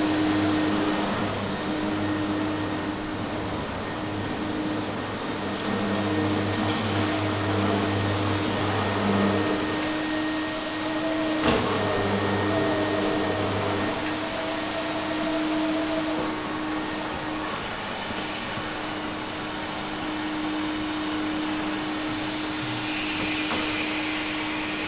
Poznan, Morasko University Campus - floor cleaner
a guy cleaning the corridor floor with an electric machine.